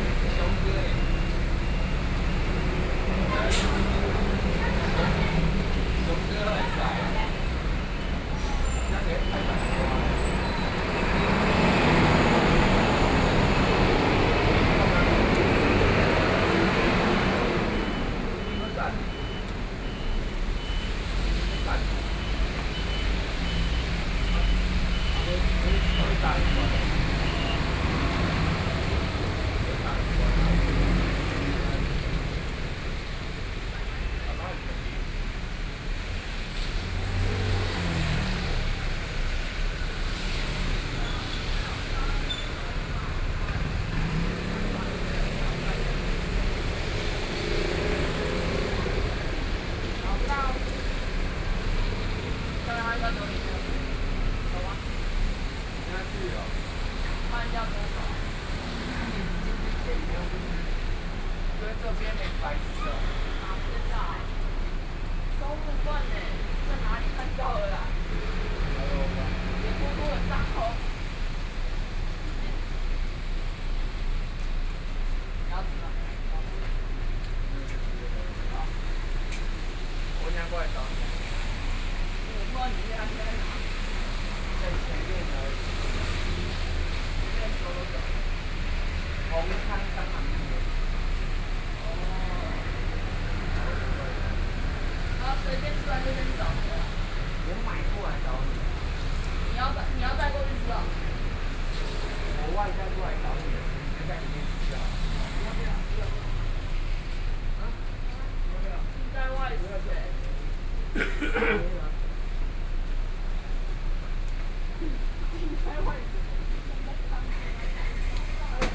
Nanchang Rd., Taipei City - Rainy Day
Rainy Day, Traffic noise and the crowd, Sony PCM D50 + Soundman OKM II
Taipei City, Taiwan, 16 August 2013